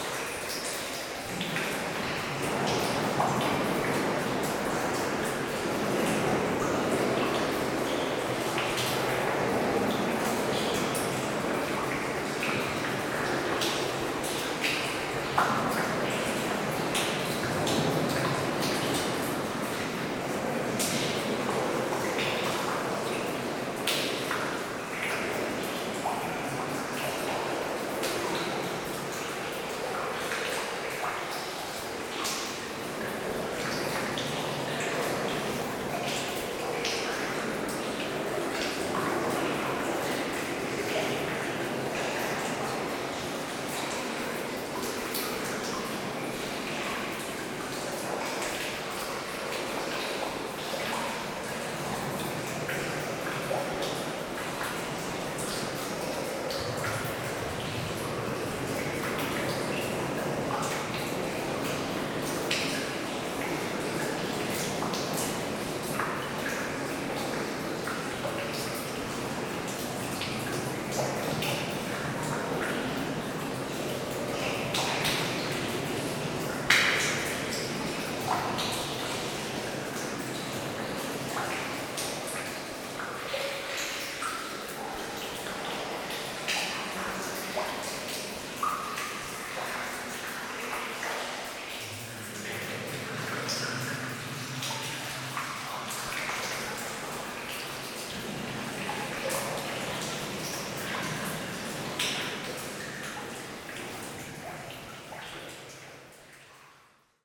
Russange, France - A flooded mine
Exploring very deeply a flooded mine, in a difficult to walk place. Reverb is quite important.
27 October, 11:20